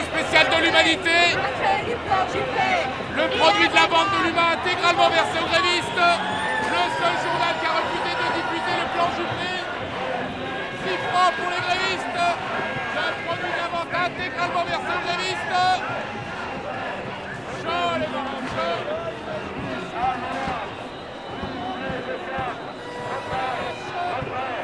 Pl. Bellecour, Lyon, France - Lyon 1995
Lyon 1995 - Manifestations contre le plan "Juppé" - réforme des retraites et de la Sécurité Sociale
Les grèves de 1995 en France contre le plan Juppé de 1995 furent à leur époque les plus importantes depuis celles de Mai 682. Le nombre moyen annuel de jours de grève en 1995 a été six fois supérieur à celui de la période 1982-19943. Du 24 novembre au 15 décembre, des grèves d'ampleur ont eu lieu dans la fonction publique et le secteur privé contre le « plan Juppé » sur les retraites et la Sécurité sociale. Le mouvement social de l'automne 1995, souvent réduit à la grève des transports publics, très visible et fortement médiatisée, a concerné également les grandes administrations (La Poste, France Télécom, EDF-GDF, Éducation nationale, secteur de la santé, administration des finances, ...).